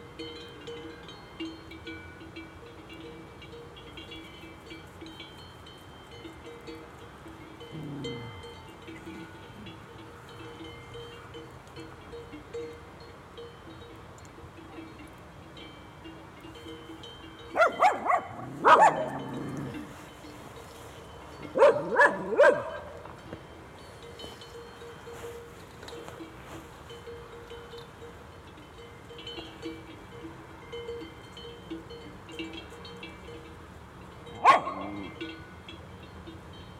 Harenda, Zakopane, Polska - A herd of cows with bells - binaural
A herd of cows with bells and fodder dogs
behind the back, the noise of the road 47
binaural recordins, Olympus LS-100 plus binaural microphones Roland CS-10EM
Suavas Lewy
Zakopane, Poland, 4 September 2017